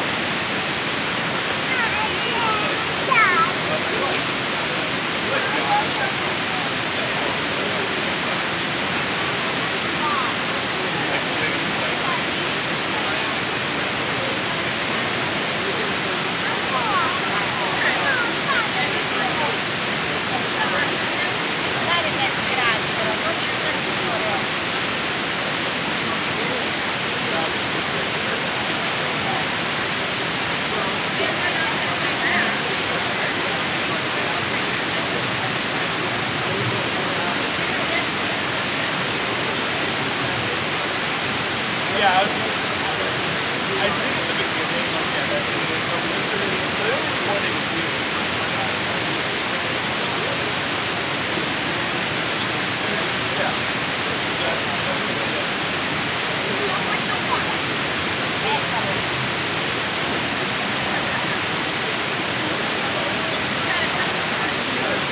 E 53rd St, New York, NY, USA - Artificial Waterfall, Paley Park, NYC
Sounds from the artificial waterfall on Paley Park, a small pocket park designed by Robert Zion (1967).
New York, United States, 23 August